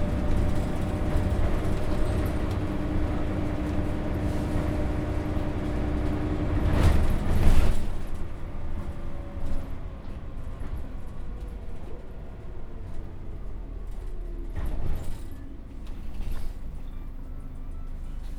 Bus, Public Transport, Transport, Common, Engine, Passengers, Newcastle, UK, Tyne Bridge, River Tyne, Geordies, Road, Travel